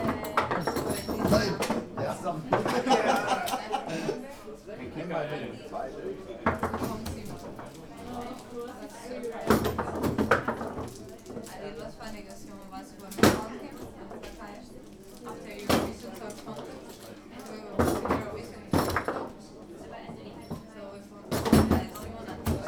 Schwäbisch Gmünd, Germany - Gmuend Tech Student Lounge